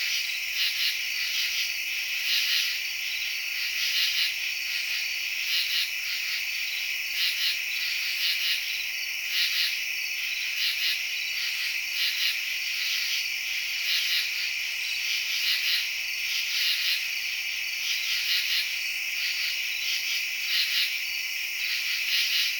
Tanglewood Ln, Cincinnati, OH, USA - Urban Katydids
Midnight orthopteran chorus and neighborhood sounds